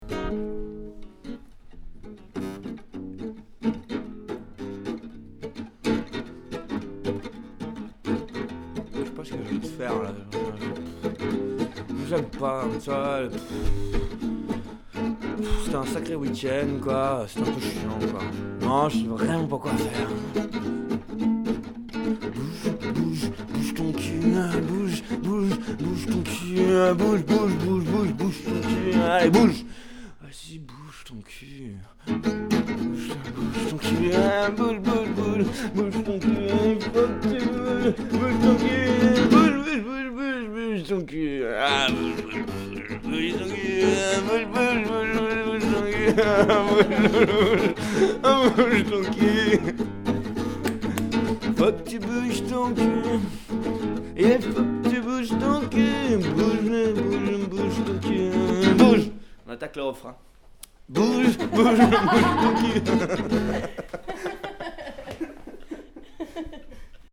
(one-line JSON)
{"title": "Musician playing in Cardo s salon Marseille", "date": "2010-07-28 12:00:00", "description": "French song played by H. Means you have to move your ass.", "latitude": "43.30", "longitude": "5.39", "altitude": "55", "timezone": "Europe/Paris"}